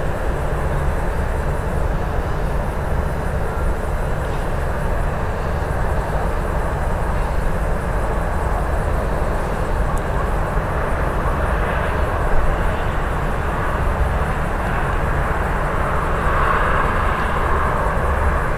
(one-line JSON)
{"title": "Utena, Lithuania - Utena city Noise", "date": "2018-09-13 20:34:00", "description": "Utena city noise", "latitude": "55.51", "longitude": "25.63", "altitude": "124", "timezone": "GMT+1"}